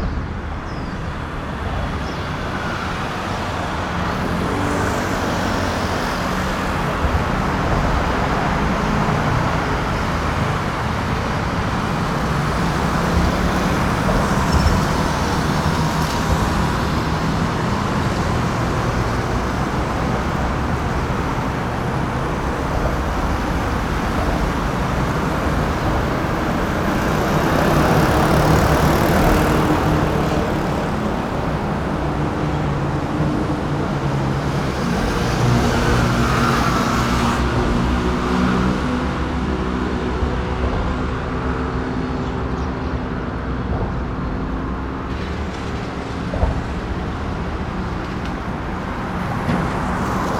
西濱公路, Bali Dist., New Taipei City - Traffic Sound
under the Viaduct, traffic sound
Sony PCM D50